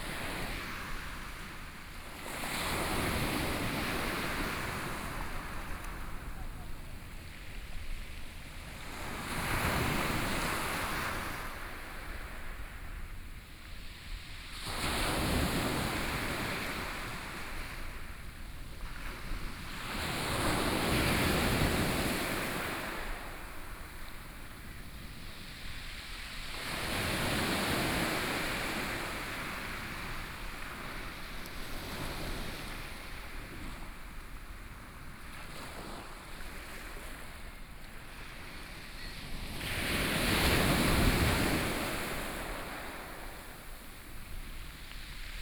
At the beach, Sound of the waves
Yilan County, Taiwan